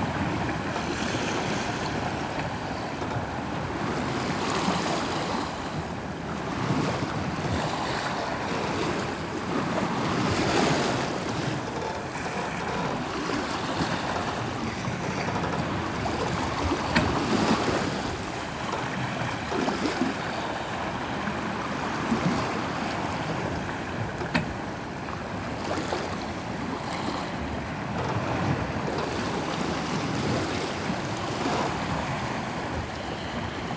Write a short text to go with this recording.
Recorded with a stereo pair of DPA 4060s and a Sound Devices MixPre-3